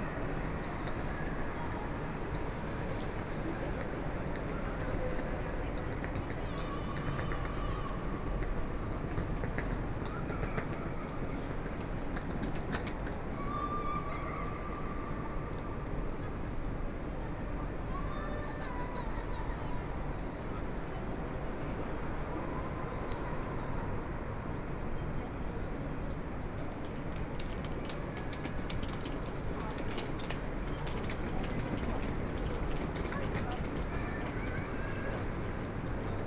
München-Flughafen, Germany - In the Square
In the Square
Oberding, Germany, 6 May 2014, 20:11